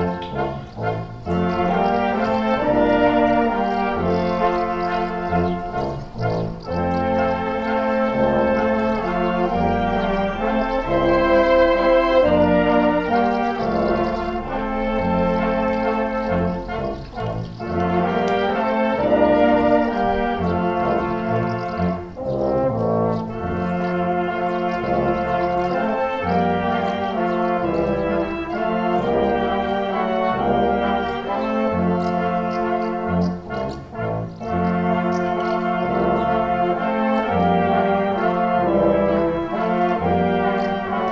Funeral at Krásná Hora - Brass band during the funeral ceremony
Before the funeral procession departed from the church to the graveyard the brass band plays several songs.
Krásná Hora, Czech Republic, 19 August 2013, 12:39